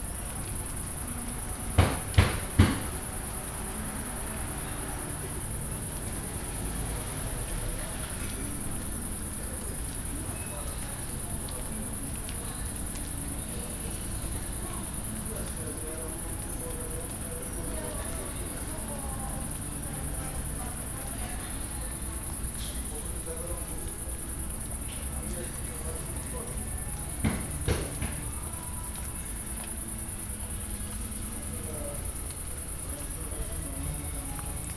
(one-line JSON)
{"title": "Poznan, Jana III Sobieskiego housing estate - in front of pizza place", "date": "2014-07-14 21:44:00", "description": "recorded in front of pizza place. staff taking phone orders and serving customers. TV on. damp evening, rain drops falling from trees.", "latitude": "52.46", "longitude": "16.91", "altitude": "99", "timezone": "Europe/Warsaw"}